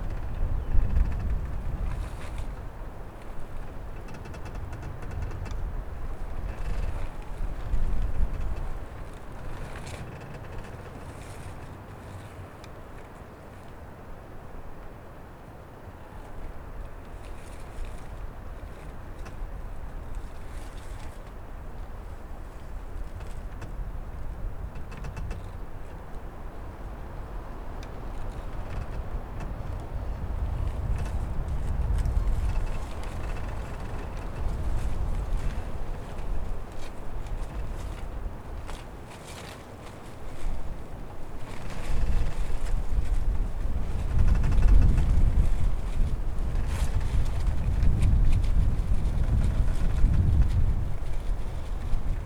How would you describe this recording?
wind play in the brokem hut in the wood